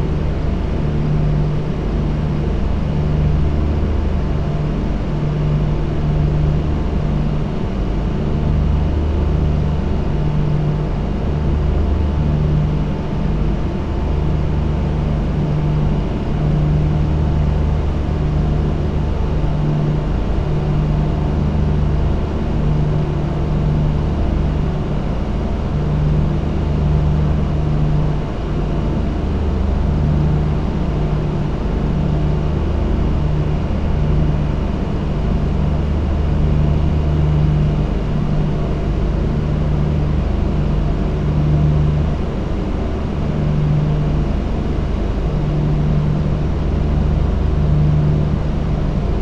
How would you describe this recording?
Cooling Units Drone, All the perishable products waiting at the Port of Santa Cruz in truck trailers with refrigeration units turned on produce an all-masking drone.